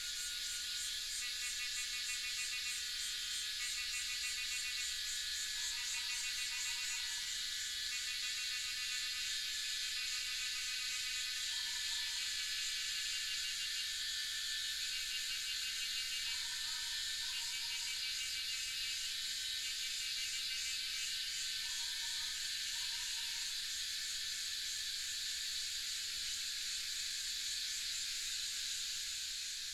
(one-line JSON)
{"title": "華龍巷, 南投縣魚池鄉 - Faced with the forest", "date": "2016-06-08 08:06:00", "description": "Faced with the forest, Cicada sounds", "latitude": "23.93", "longitude": "120.89", "altitude": "754", "timezone": "Asia/Taipei"}